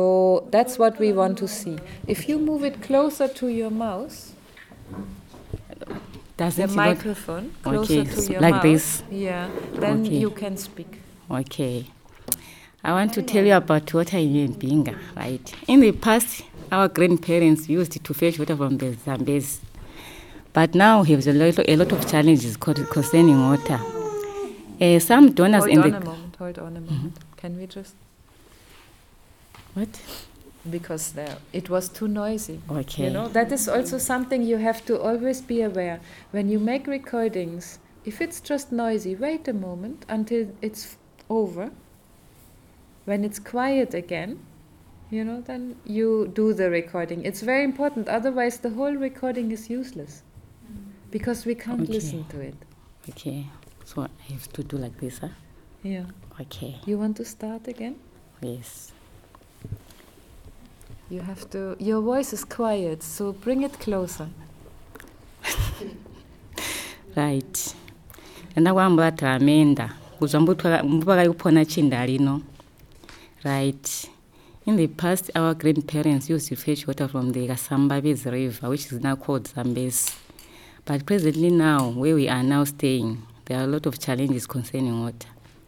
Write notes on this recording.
...we discover that the issue of getting water for the family will be an issue not easily understood by listeners from places where water flows continuously from taps... so we asked Lucia to try again, focusing just on the issue of water... the workshop was convened by Zubo Trust, Zubo Trust is a women’s organization bringing women together for self-empowerment.